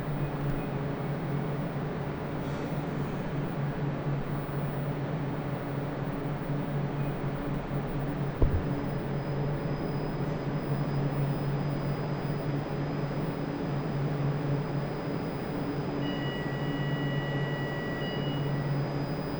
Perugia, Italy - inside the minimetro station la cupa
23 May 2014, 14:57